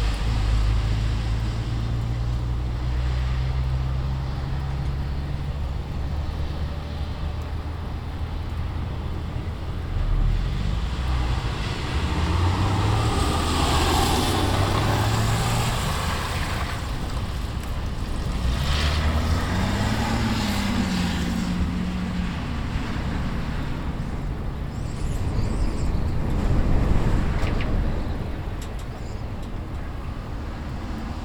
Moscow, Shipilovskyi pr. - Windy night at bus stop